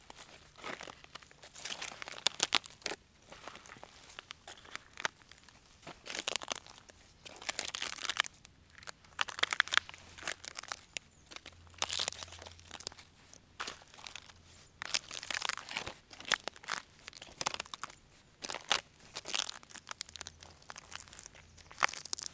Mettray, France - Sycamores
The Mettray prison has a lot of sycamores. How to explain that with sound ? It's a special place and I was wishing to show that. On the ground, there's a lot of platelets, it's dead barks. I'm walking on it in aim to produce the cracks.
12 August 2017, 15:10